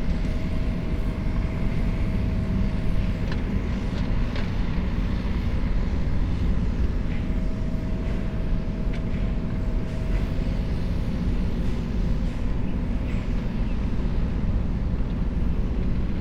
Albion St, Los Angeles, CA, USA - Construction of Albion Riverside Park
Morning construction sounds during the final construction phases of Albion Riverside Park.